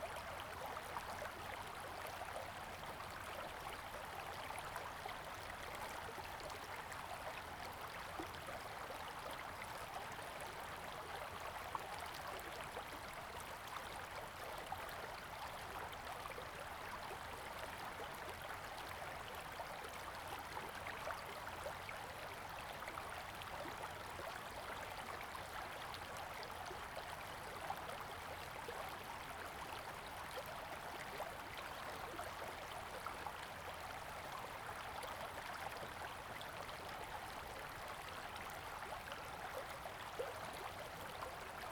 溪底田, 台東縣太麻里鄉 - Beside the river
stream, Beside the river, Bird call
Zoom H2n MS+XY